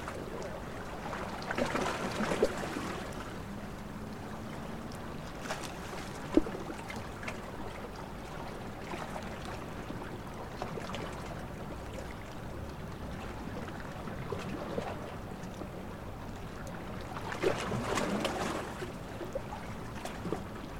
Sc Puerto Gandia Autoriza, Valencia, España - Noche junto al puerto de Gandía zona de Pescadores
Noche en el puerto de Gandía, en una zona donde se suelen poner pescadores, esta noche había unos señores pescando. Donde se ponen los pescadores es una zona rocosa y aunque al ser puerto el movimiento del agua es tranquilo, los pequeños movimientos de agua que chocan en la zona rocosa hace sonar esos gorgoritos de agua y los pequeños choques de olas. Está cerca del paseo marítimo y al ser una zona turística, se puede escuchar un poco de fondo el sonido de la vida del paseo.
Comunitat Valenciana, España, 16 August 2020